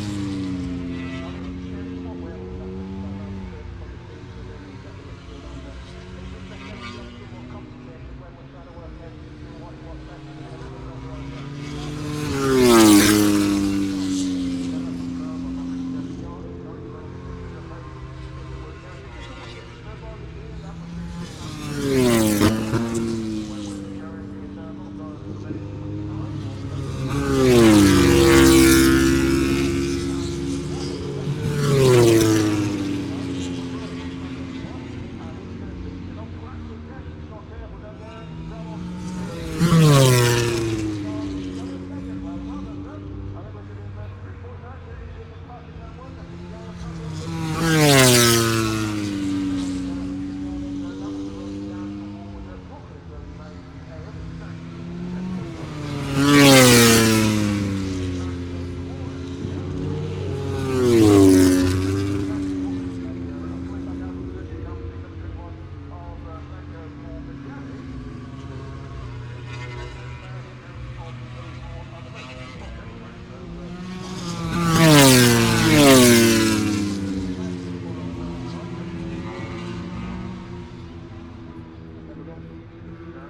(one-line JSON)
{"title": "Silverstone Circuit, Towcester, UK - british motorcycle grand prix 2019 ... moto grand prix ... fp3 ...", "date": "2019-08-24 09:55:00", "description": "british motorcycle grand prix 2019 ... moto grand prix ... free practice three ... maggotts ... lavaliers clipped to bag ...", "latitude": "52.07", "longitude": "-1.01", "altitude": "156", "timezone": "Europe/London"}